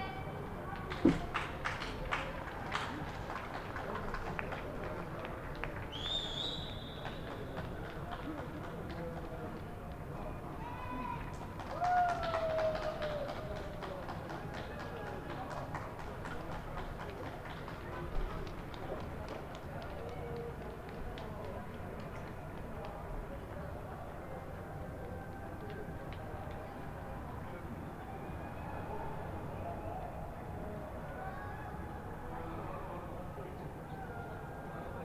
{"title": "Devínska Nová Ves, Bratislava, Slovakia - Devínska Nová Ves, Bratislava: Applause for Slovak Doctors, Nurses and All Frontline Fighters of Covid-19", "date": "2020-03-20 20:00:00", "description": "People went out on their balconies and to the streets of their neighborhood in Devínska Nová Ves to applaud and show their support and gratitude for healthcare staff and all other people trying to protect everyone from Corona virus.", "latitude": "48.20", "longitude": "16.98", "altitude": "160", "timezone": "Europe/Bratislava"}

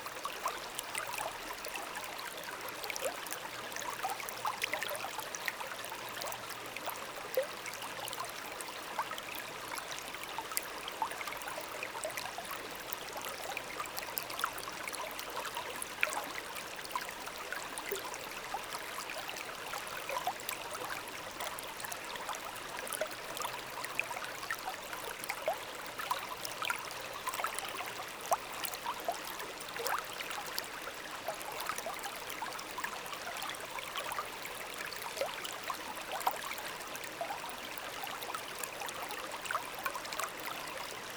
{"title": "Oberwampach, Luxembourg - Wilz river", "date": "2018-07-01 10:30:00", "description": "On a very bucolic and remote landscape, the Wilz river flowing quietly.", "latitude": "50.00", "longitude": "5.84", "altitude": "384", "timezone": "Europe/Luxembourg"}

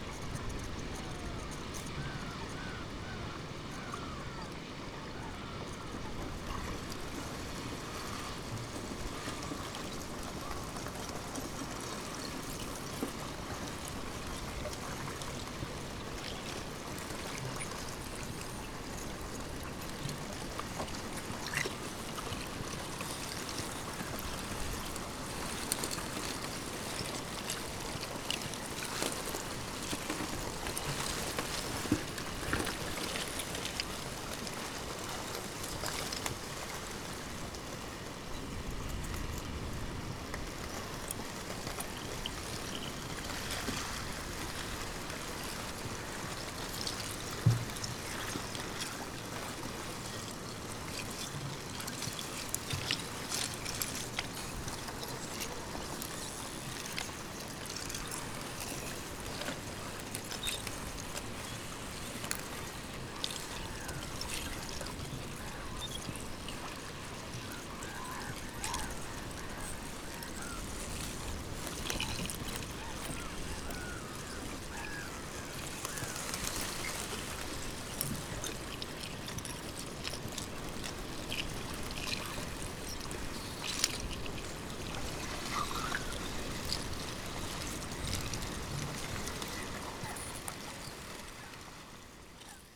{"title": "Lithuania, Sudeikiai, movement of thin ice bits - movement of thin ice bits", "date": "2012-04-15 15:27:00", "description": "temperature is about + 14, however there's still some ice on big lake. and thin ice bits on the shore moving with wind and waves", "latitude": "55.59", "longitude": "25.69", "altitude": "138", "timezone": "Europe/Vilnius"}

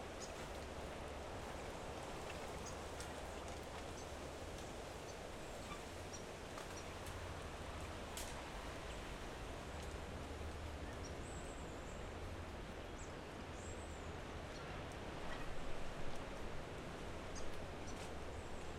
{"title": "Royal National Park, NSW, Australia - Leaving my microphone in the coastal forest at dusk", "date": "2014-09-28 18:00:00", "description": "Packing away my gear, making sure every thing was set-up right and also being bit by a large march fly.\nRecorded with an AT BP4025 into a Tascam Dr-680.\nBixPower MP100 was used as an external battery, it still had about half it's battery life left when I picked it up the next morning.", "latitude": "-34.19", "longitude": "151.04", "altitude": "79", "timezone": "Australia/Sydney"}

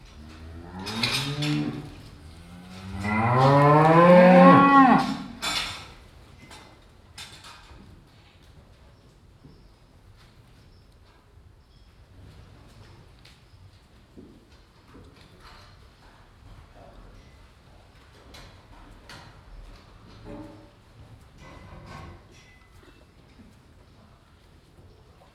{"title": "Bastendorf, Tandel, Luxemburg - Bastendorf, cowshed", "date": "2012-08-07 11:30:00", "description": "In einem größeren Kuhstall. Die Klänge der Kühe, die sich in ihren Boxen bewegen ihr Muhen und das metallische Rasseln der Verschläge. Gegen Ende das läuten der Kirchglocke.\nInside a bigger cowshed. The sounds of cows moving inside their boxes, the rattling of the metal dens and their mooing. At the end the bell from the church.", "latitude": "49.89", "longitude": "6.16", "altitude": "227", "timezone": "Europe/Luxembourg"}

